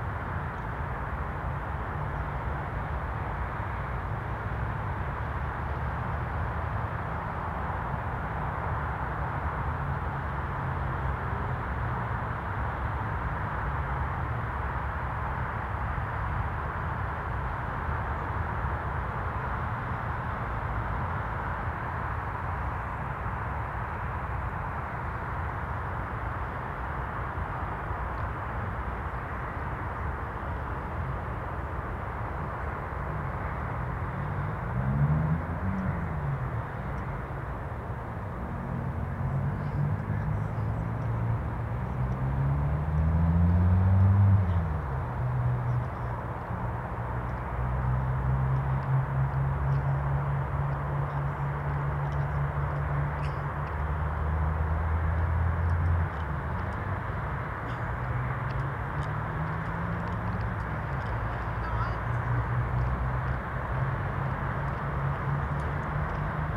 Contención Island Day 9 outer southwest - Walking to the sounds of Contención Island Day 9 Wednesday January 13th
The Drive Moor Place Woodlands Oaklands Avenue Oaklands Grandstand Road High Street Moor Crescent The Drive
A flock of 20 Golden Plovers fly
looping
circling
I lose them as they fly over my head